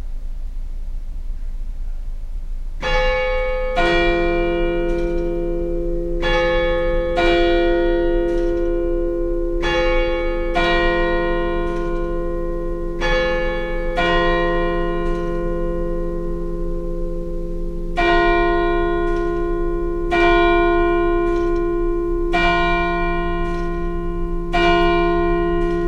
Chaumont-Gistoux, Belgique - Chaumont bells
Small recording of the Chaumont bells at five.
15 August 2016, 16:59